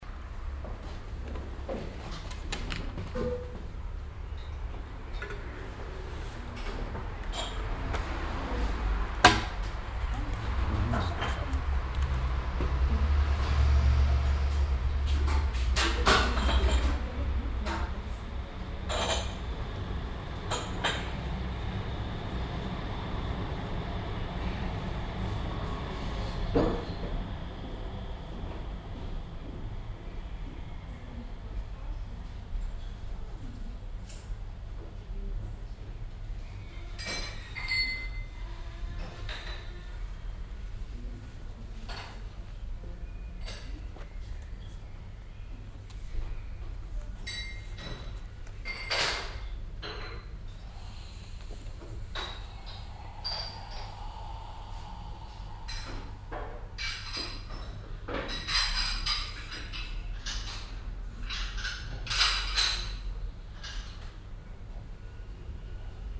cologne, aachenerstrasse, cafe schmitz
soundmap: köln/ nrw
cafe schmitz, morgens, geschirr geräusche, kaffee zubereitung, hintergrungsverkehr der aachener strasse
project: social ambiences/ listen to the people - in & outdoor nearfield recordings - listen to the people
5 June 2008